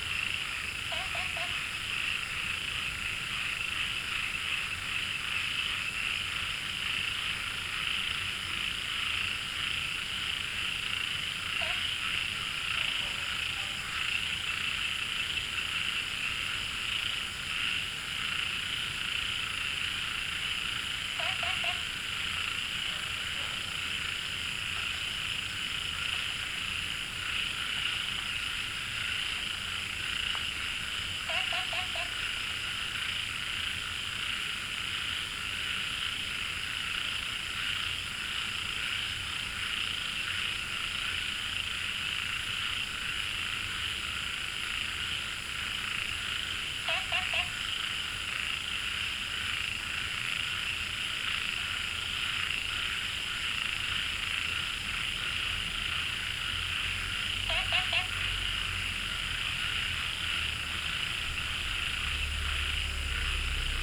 茅埔坑溼地, 南投縣埔里鎮桃米里 - In Wetland Park
Frogs chirping, In Wetland Park
Puli Township, 桃米巷11-3號